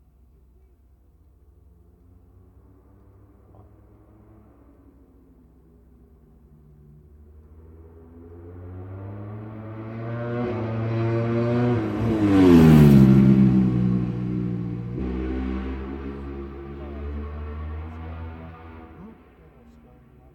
Brands Hatch GP Circuit, West Kingsdown, Longfield, UK - world superbikes 2004 ... superbikes ...
world superbikes 2004 ... superbikes superpole ... one point stereo mic to minidisk ...